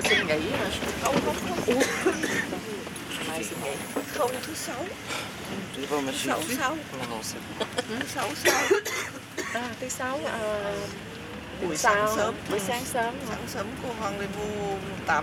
Albert, Forest, Belgique - 2 women talking in the Tram 55
Minidisc recording from 2007.
Brussel-Hoofdstad - Bruxelles-Capitale, Région de Bruxelles-Capitale - Brussels Hoofdstedelijk Gewest, België / Belgique / Belgien, 16 October, 10:30